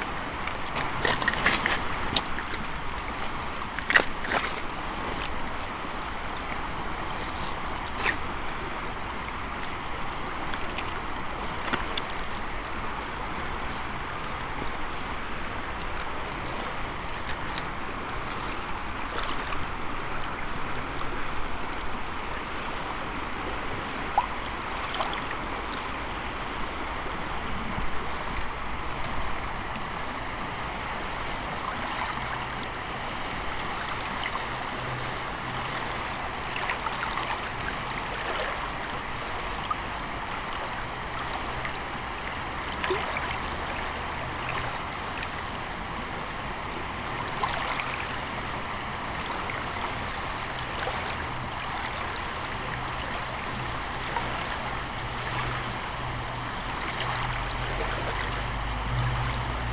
Drava river, Slovenia - flux
from very near - subtle waves